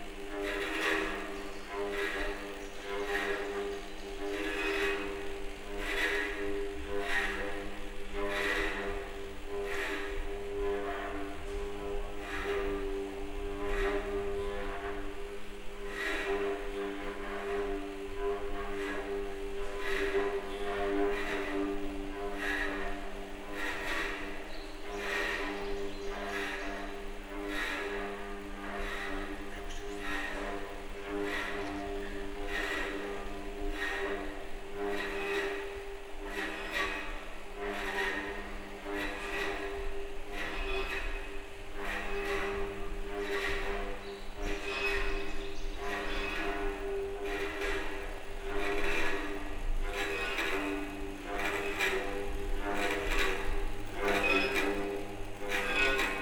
Malpartida de Cáceres, Cáceres, Spain - Sound supture - Self-oscillating guitar
Fluxus Sound sculpture (self oscillating motor on acoustic guitar). MS recording (Fostex FR2 LE + AKG Blue line 91/94)
12 September 2014, 11:14